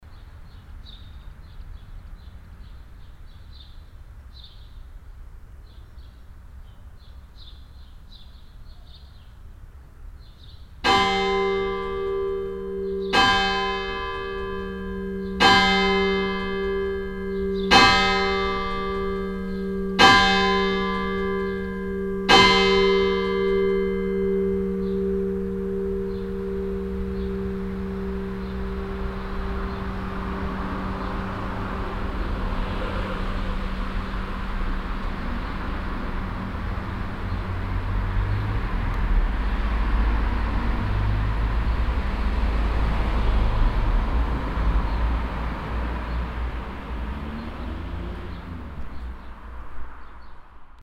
wilwerdange, church bells
The church of Wilwerdange stands close to the main street. The sound of the passing by traffic and the 18.00 church bells.
Wilwerdange, Kirchenglocken
Die Kirche von Wilwerdange steht nah an der Hauptstraße. Das Geräusch von vorbeifahrendem Verkehr und die 18.00 Uhr-Glocken.
Wilwerdange, cloches de l'église
L’église de Wilwerdange est située à proximité de la rue principale. Dans le lointain, on entend le trafic qui passe et le carillon de 18h00 de l’église.
Project - Klangraum Our - topographic field recordings, sound objects and social ambiences